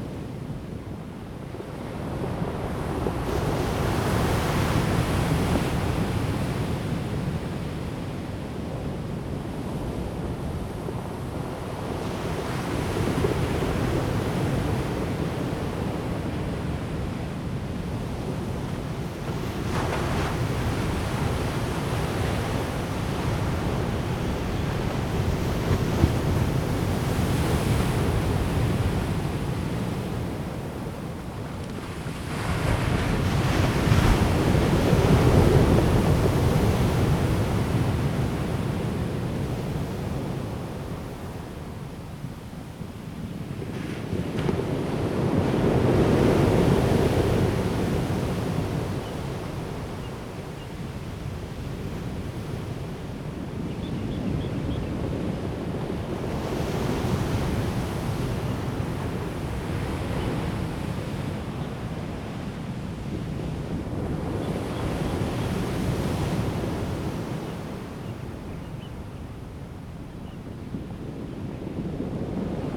Nantian Coast Water Park, 台東縣達仁鄉 - On the coast
On the coast, Chicken crowing, Bird cry, Sound of the waves
Zoom H2n MS+XY
Taitung County, Daren Township, 台26線, 28 March, 08:09